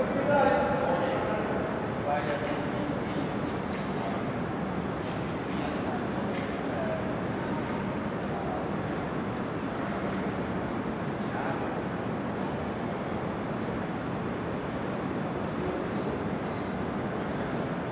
MetLife Building, Park Ave, New York, NY, USA - Ground floor lobby of MetLife Building
Sounds from the spacious ground floor lobby of MetLife Building.
Recorded at night, mostly empty, only the security guards are heard.